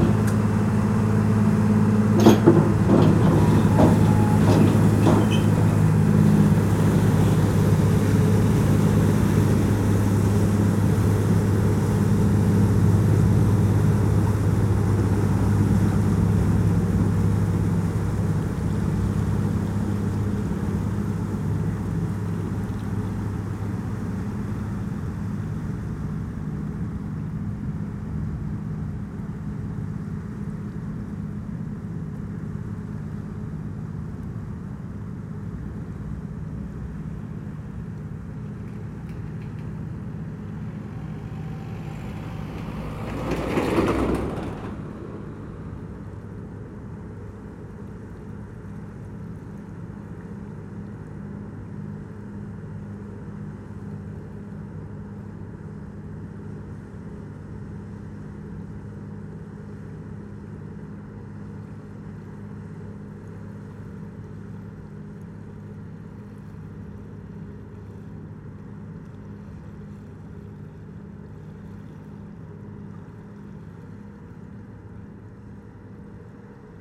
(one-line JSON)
{"title": "Heurteauville, France - Jumièges ferry", "date": "2016-09-17 12:00:00", "description": "The Jumièges ferry charging cars and crossing the Seine river.", "latitude": "49.43", "longitude": "0.80", "altitude": "3", "timezone": "Europe/Paris"}